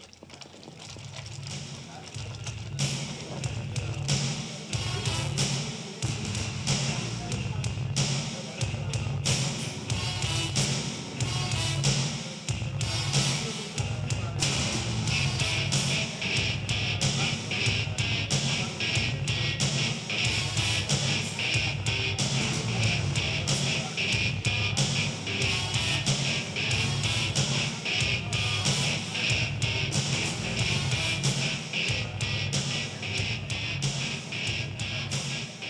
berlin, sonnenallee: o tannenbaum - the city, the country & me: bar, project room 'o tannenbaum'
the city, the country & me: june 3, 2011
Berlin, Deutschland